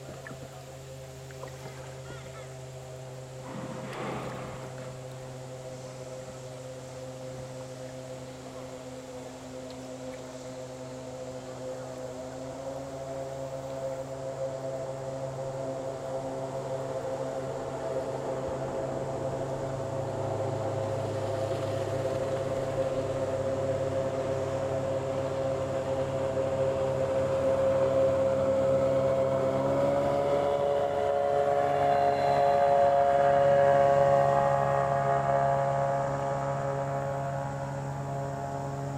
It is to be expected that if you float an Ozark stream in the summer on the weekend you will have no peace. Sunday is family day on the rivers and things are a bit tamer. In this recording you can hear a jon boat slow down for a family with small children strewn across the river on giant inflatable pool toy animals. It then speeds up passing me though I have knowingly stopped my packraft on a sandbar. This is all followed by a pickup truck overtaking a car on the two lane highway above the river. Note shouts of appreciation for the boat’s wake and the aggressive driving.